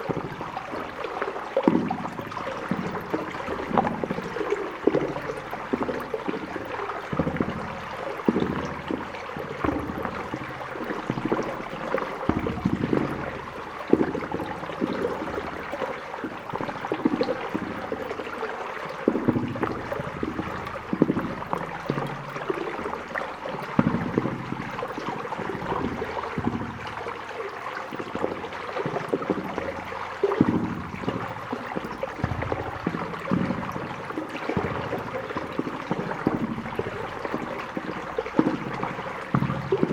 Volmerange-les-Mines, France - Bubbles in a pipe
Into the underground mine, water is entering into a pipe and makes some strange sounds. It's because of turbulence and some small bubbles.